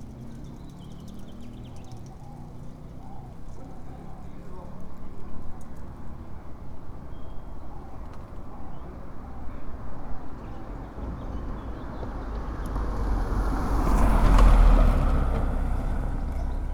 Av Roma, Andrade, León, Gto., Mexico - Afuera de la escuela primaria La Salle Andrade durante la cuarentena por COVID-19 en el primer día de la fase 3.
Outside La Salle Andrade Elementary School during COVID-19 quarantine on the first day of Phase 3.
Normally at this time, it is full of people and cars that come to pick up the children after leaving their classes. Now it is almost alone.
(I stopped to record while going for some medicine.)
I made this recording on April 21st, 2020, at 2:17 p.m.
I used a Tascam DR-05X with its built-in microphones and a Tascam WS-11 windshield.
Original Recording:
Type: Stereo
Normalmente a esta hora aquí está lleno de gente y coches que vienen a recoger a los niños a la salida de sus clases. Ahora está casi solo.
(Me detuve a grabar al ir por unas medicinas.)
Esta grabación la hice el 21 de abril 2020 a las 14:17 horas.
Guanajuato, México, April 2020